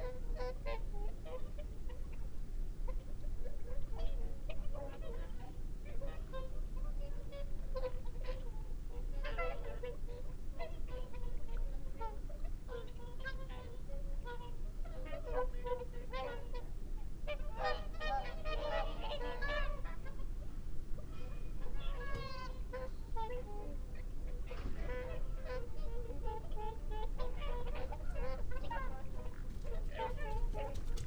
{"title": "Dumfries, UK - whooper swan soundscape ...", "date": "2022-02-03 18:05:00", "description": "whooper swan soundscape ... xlr sass to zoom h5 ... bird calls from ... curlew ... wigeon ... mallard ... time edited unattended extended recording ...", "latitude": "54.98", "longitude": "-3.48", "altitude": "8", "timezone": "Europe/London"}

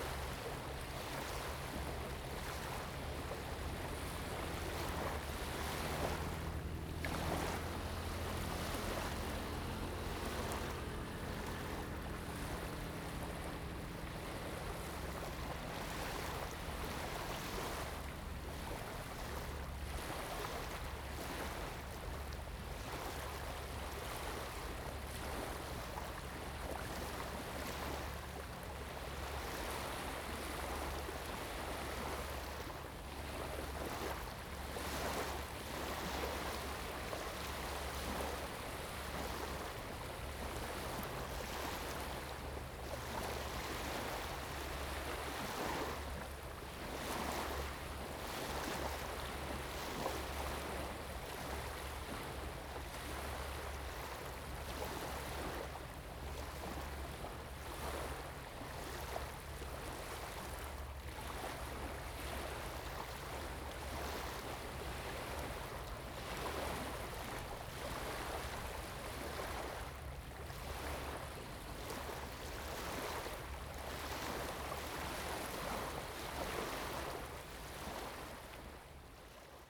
{"title": "沙港東漁港, Huxi Township - Sound of the waves", "date": "2014-10-22 07:59:00", "description": "At the beach, Sound of the waves\nZoom H2n MS+XY", "latitude": "23.61", "longitude": "119.62", "altitude": "4", "timezone": "Asia/Taipei"}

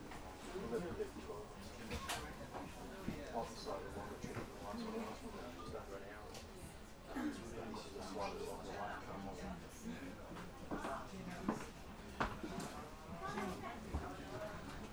{"title": "Viareggio, Province of Lucca, Italy - Train", "date": "2016-05-15 09:10:00", "latitude": "43.87", "longitude": "10.25", "altitude": "2", "timezone": "GMT+1"}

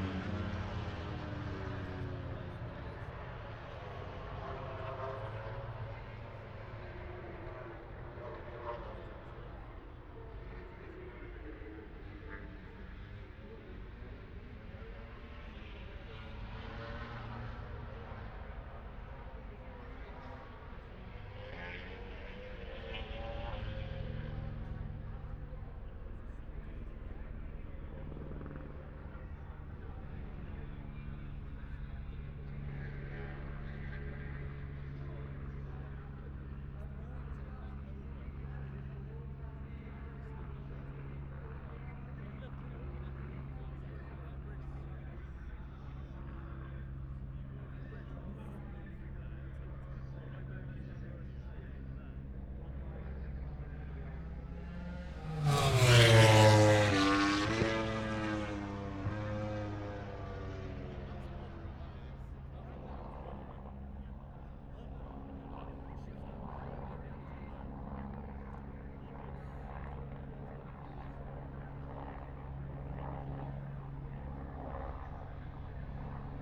{"title": "Silverstone Circuit, Towcester, UK - british motorcycle grand prix ... 2021", "date": "2021-08-28 14:35:00", "description": "moto grand prix qualifying two ... wellington straight ... dpa 4060s to MixPre3 ...", "latitude": "52.08", "longitude": "-1.02", "altitude": "157", "timezone": "Europe/London"}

